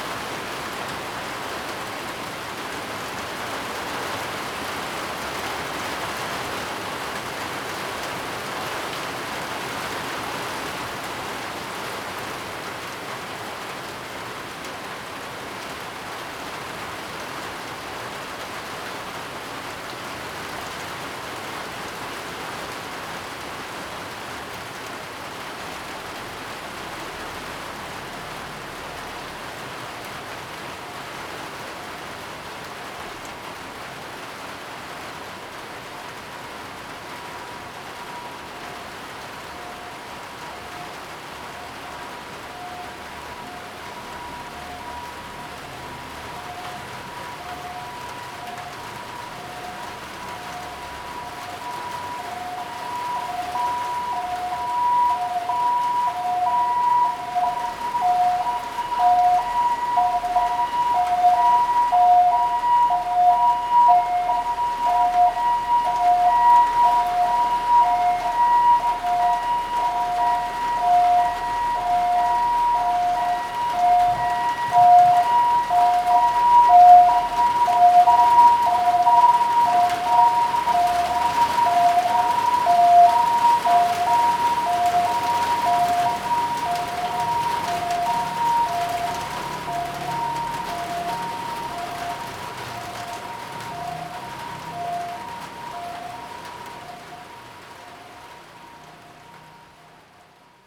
Daren St., Tamsui District - Heavy rain
Heavy rain, Traffic Sound
Zoom H2n MS +XY
New Taipei City, Taiwan